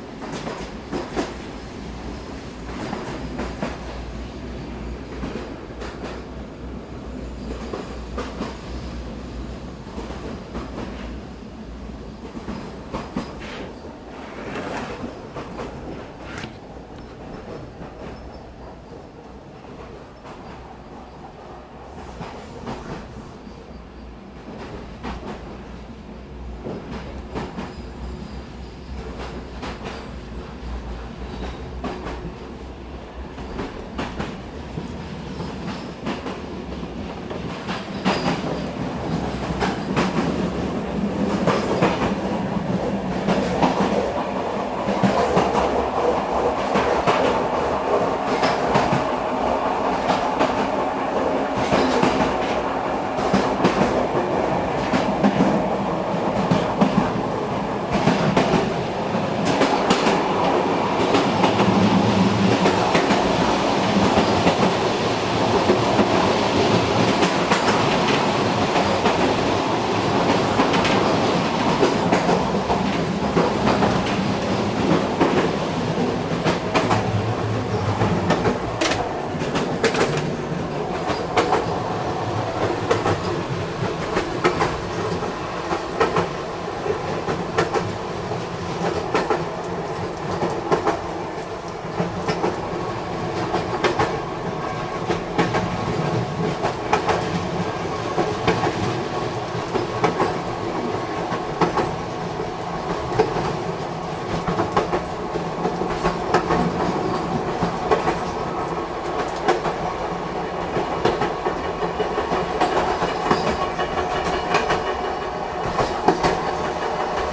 the same sound that cradles you into sleep and wakes you up, endless bulgarian railroad impressions, tacted by a fractal beauty of never equal repetition.
Bulgaria, October 2010